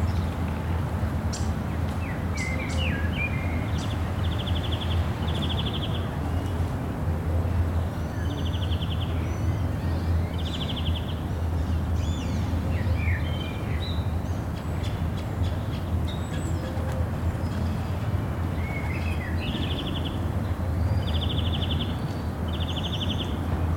Rue Léon Jouhaux, Toulouse, France - Jolimont 01
ambience Parc
Captation ZOOM h4n
Occitanie, France métropolitaine, France, 10 April 2022